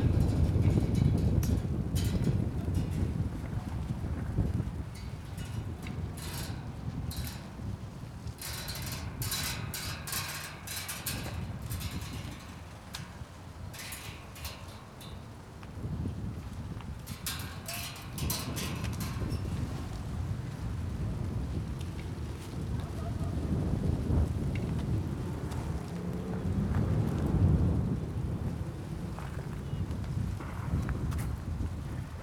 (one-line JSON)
{"title": "Srem, Zwirowa Raod - plastic flaps", "date": "2014-04-20 15:16:00", "description": "recorded in front of a construction site of an apartment building, which is still in raw state. windows already fitted yet still covered in plastic wrap. the plastic is teared up and pieces of wrap flutter in the strong wind. the fence of the construction site rattles in the wind. speeding motor bike roars over the city.", "latitude": "52.09", "longitude": "17.00", "altitude": "80", "timezone": "Europe/Warsaw"}